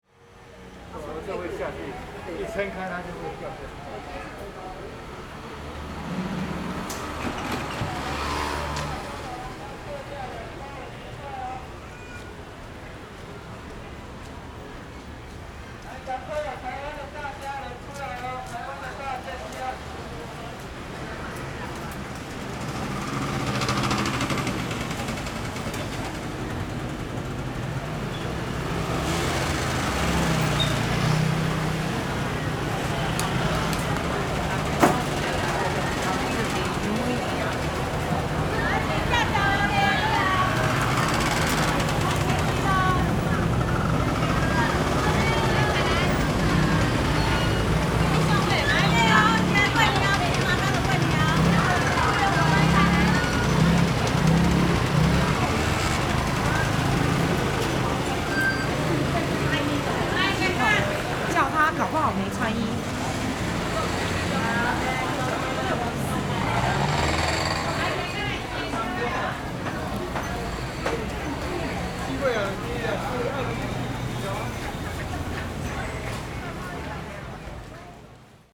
{"title": "Minguang St., Yonghe Dist., New Taipei City - in the traditional market", "date": "2012-01-18 17:57:00", "description": "Walking in the traditional market, Traffic Sound\nZoom H4n", "latitude": "25.00", "longitude": "121.52", "altitude": "13", "timezone": "Asia/Taipei"}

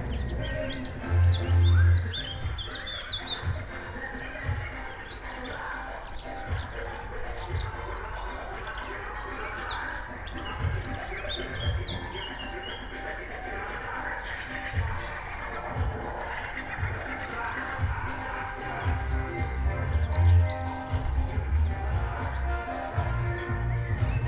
{"title": "Brandheide, Hamm, Germany - street mix Brandheide...", "date": "2020-04-24 19:15:00", "description": "over the past six weeks, since the beginning of the lockdown and social distancing regulations, \"Brandheide radio\" goes on air for 30 minutes form some speakers in one of the gardens. here we are listening to the special street mix from a little further down the road; the birds in some large old trees here seem to enjoy tuning in too...", "latitude": "51.68", "longitude": "7.88", "altitude": "64", "timezone": "Europe/Berlin"}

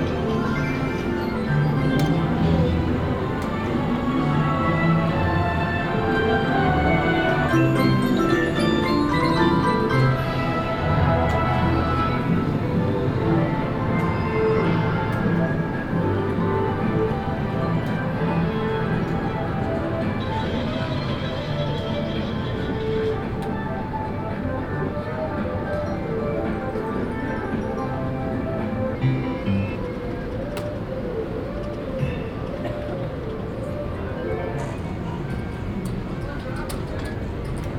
{"title": "Alyth - Bonnybrook - Manchester, Calgary, AB, Canada - Century Casino", "date": "2015-12-11 17:00:00", "latitude": "51.02", "longitude": "-114.04", "altitude": "1060", "timezone": "America/Edmonton"}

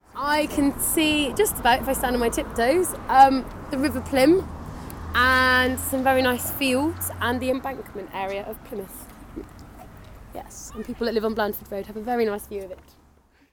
{"title": "Walk Three: The view from Blanford Road", "date": "2010-10-04 16:00:00", "latitude": "50.39", "longitude": "-4.10", "altitude": "72", "timezone": "Europe/London"}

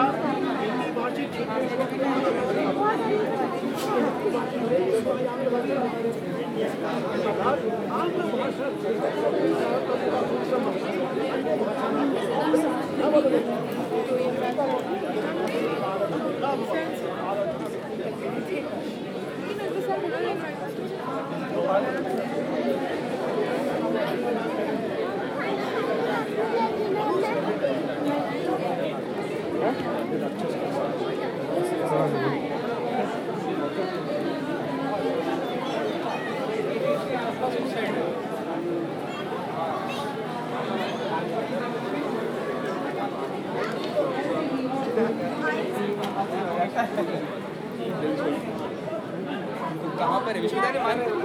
{"title": "Unnamed Road, Pragati Maidan, New Delhi, Delhi, India - 14 World Book Fair", "date": "2016-02-05 15:09:00", "description": "World Book Fair at Pragati Maidan/\nZoom H2n + Soundman OKM", "latitude": "28.62", "longitude": "77.24", "altitude": "210", "timezone": "Asia/Kolkata"}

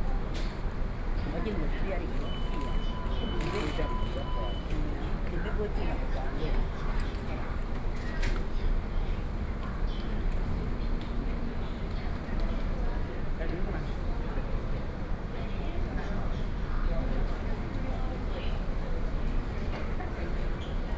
{"title": "Taipei Main Station, Taiwan - waiting areas", "date": "2013-07-26 13:57:00", "description": "Taiwan High Speed Rail, waiting areas, Sony PCM D50 + Soundman OKM II", "latitude": "25.05", "longitude": "121.52", "altitude": "29", "timezone": "Asia/Taipei"}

